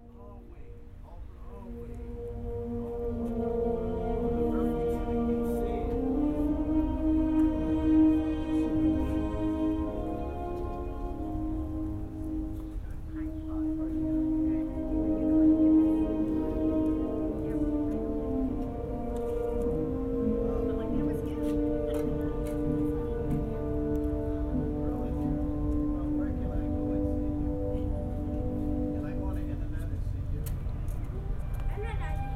McDougall - Hunt, Detroit, MI 48207, USA - Heidelberg Project
3 September